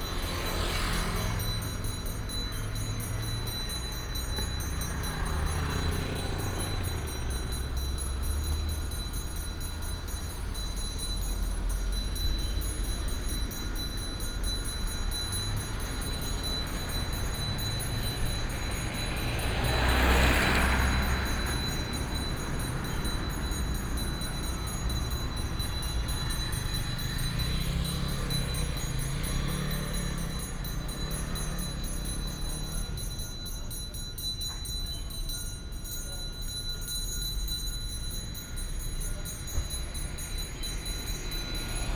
Wufu Rd., Luzhu Dist. - Altar and traffic sound
Altar and traffic sound, Dog sounds
Luzhu District, Taoyuan City, Taiwan, 1 August 2017